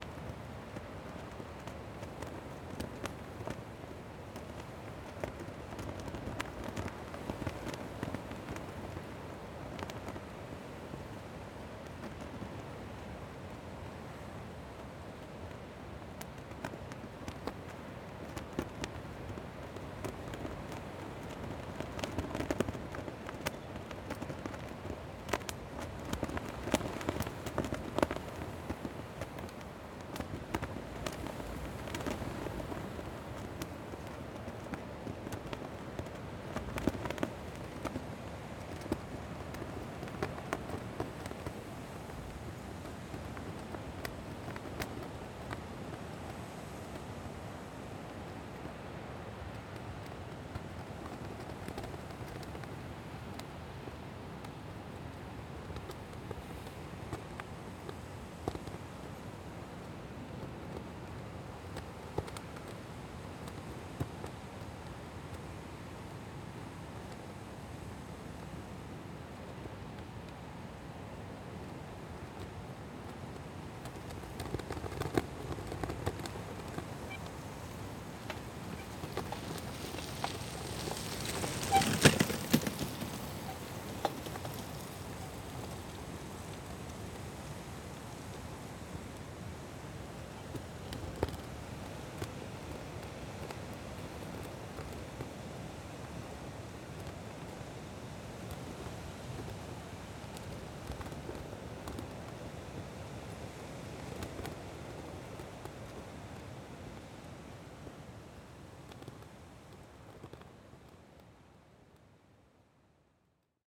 Insel Hiddensee, Kloster, Deutschland - Hiddensee - flag flapping in the wind, bike passing, stiff breeze
Hiddensee - waves hitting rocks, stiff breeze. [I used the Hi-MD recorder Sony MZ-NH900 with external microphone Beyerdynamic MCE 82]